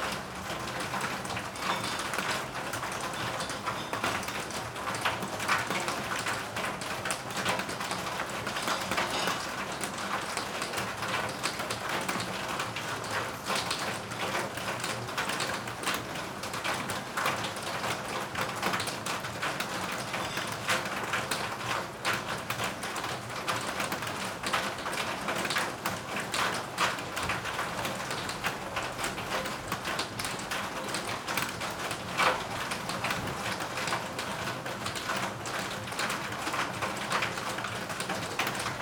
Maribor, Zitna ulica - rain drop percussion
rain drops percussion ensemble near the gymnasium, Zitna ulica. it started to rain this day, after weeks of heat.
(PCM D-50)